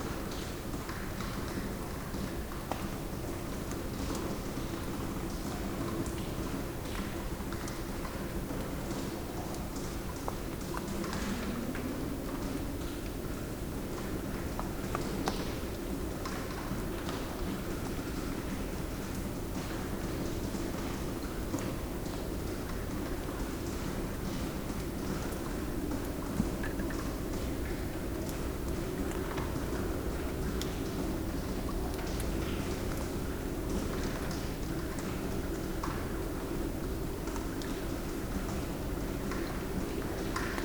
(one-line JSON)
{"title": "berlin, friedelstraße: hinterhof - the city, the country & me: backyard", "date": "2013-01-28 01:47:00", "description": "melt water dripping from the roof\nthe city, the country & me: january 28, 2013", "latitude": "52.49", "longitude": "13.43", "altitude": "46", "timezone": "Europe/Berlin"}